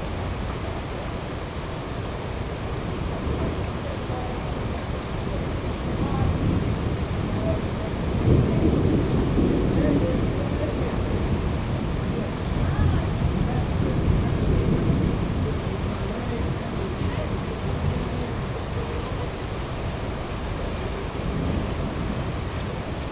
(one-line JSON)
{"title": "Ave, Ridgewood, NY, USA - Heavy rain with distant a thunderstorm", "date": "2019-08-22 20:26:00", "description": "Heavy rain with a distant thunderstorm.\nZoom H6", "latitude": "40.70", "longitude": "-73.90", "altitude": "27", "timezone": "America/New_York"}